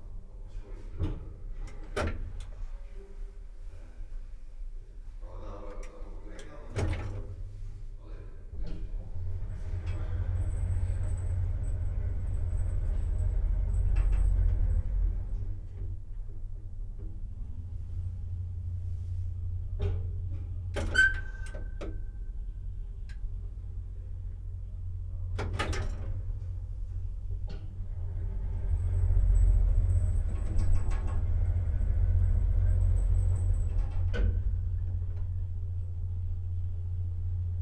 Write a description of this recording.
ride in the narrow elevator of an appartment house, (Sony PCM D50, DPA4060 binaural)